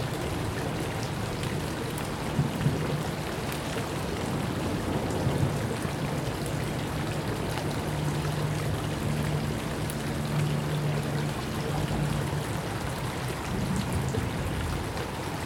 Rue de la Digue, Toulouse, France - bridge 1
circulation, water, birds, water droplet, dog barking, airplane
Occitanie, France métropolitaine, France, 28 November 2021, ~14:00